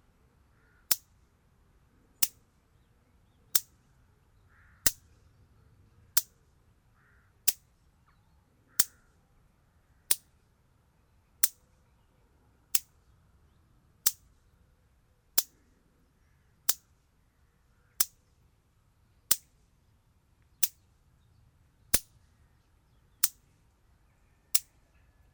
Anneville-Ambourville, France - Electric fence
In a pasture, electric fence has a problem and makes big electrical noises.